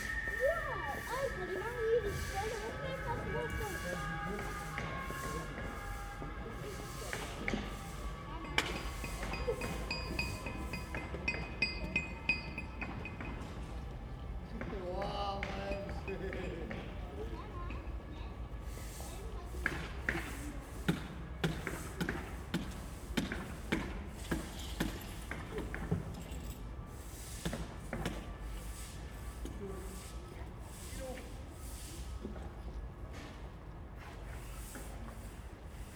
Nördliche Innenstadt, Potsdam, Germany - Re-laying the stones in the Old Market
Soundscape in the late afternoon as stones are cut and knocked into place within the rather intricate patterns designed for paving the square. This whole central area of Potsdam is being restored back to it's former 18th century glory after the impact of the DDR. Some gains but certainly losses too as some impressively brutalist communist architecture is demolished.
24 October 2016